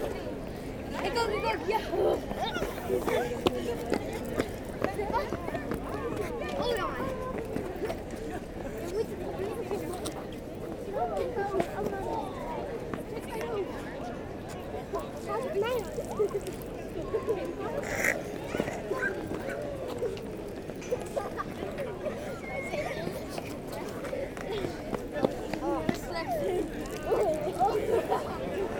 Maastricht, Pays-Bas - Children playing with bubbles
A street artist produces a colossal amount of bubbles. A swarm of children is trying to catch it. Some have full of dishwasher soap on their hair !
Maastricht, Netherlands, 20 October 2018, 15:30